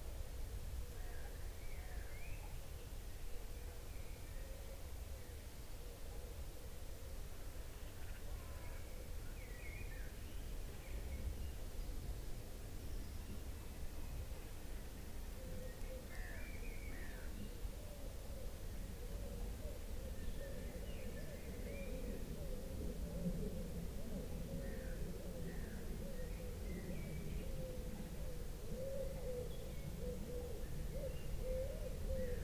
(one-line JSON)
{"title": "Warburg Nature Reserve, Swyncombe, Oxfordshire, UK - 10 miles North of my home", "date": "2014-04-28 17:00:00", "description": "Listening for 48 minutes in the forest exactly 10 miles due North of my house, for a friend's project, writing about what I heard as I sat there. The long rhythm of planes passing, the bustle of pheasants, the density of the air on a damp spring day with sunlight in the woods.", "latitude": "51.58", "longitude": "-0.97", "altitude": "127", "timezone": "Europe/London"}